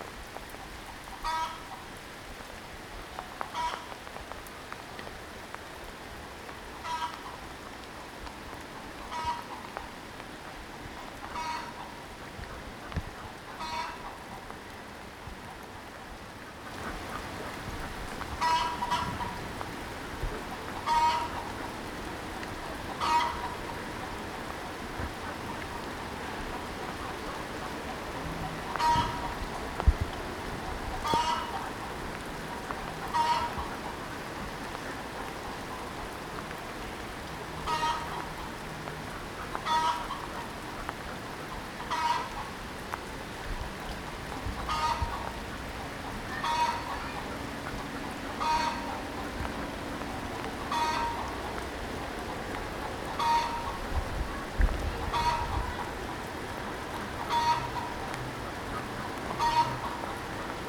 Walking Festival of Sound
13 October 2019
Ouseburn Farm sounds
North East England, England, United Kingdom